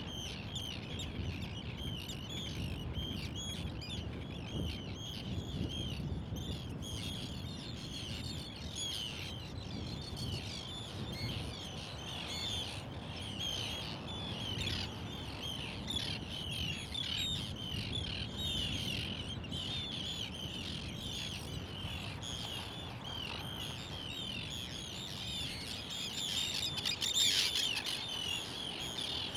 Island - Lake Jokulsárlon with floating icebergs and many seagulls around